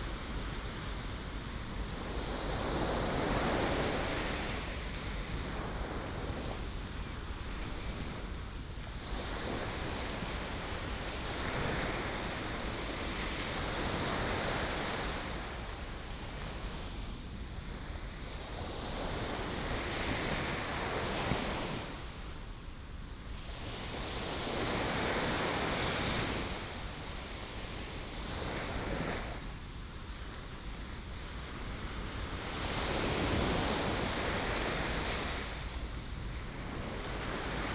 淺水灣海濱公園, 三芝區後厝里, New Taipei City - At the beach
Waterfront Park, At the beach, Sound of the waves, Aircraft flying through